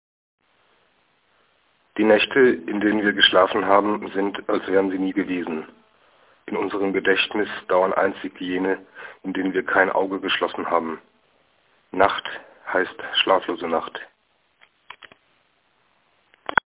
aus: Vom Nachteil, geboren zu sein
von: Emile Cioran
Berlin, Deutschland